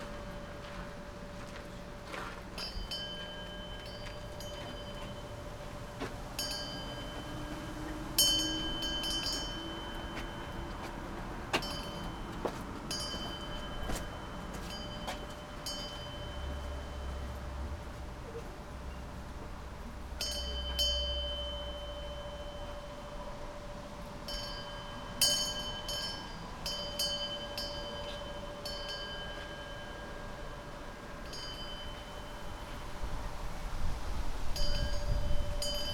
Berlin, Gardens of the World, Korean Garden - gate bell
a small bell/wind chime ringing at one of the gates in Korean Garden